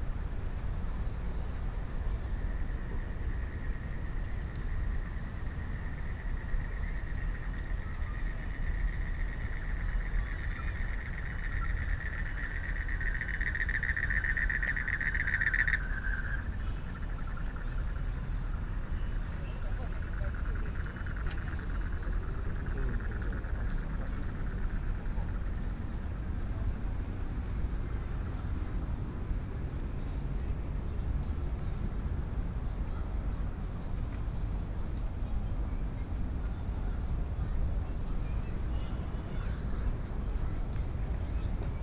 大安森林公園, Da'an District, Taipei City - Walking into the park
Walking into the park
Taipei City, Taiwan